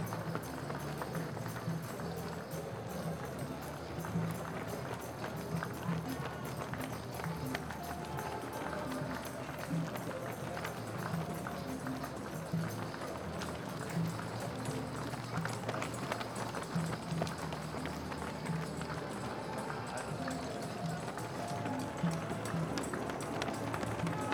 Berlin: Vermessungspunkt Kottbusser Damm 10 - Berlin Marathon sounds
Berlin Marathon, runners running-by, applauding people along the road, music
(Sony PCM D50)
Berlin, Germany, 16 September 2018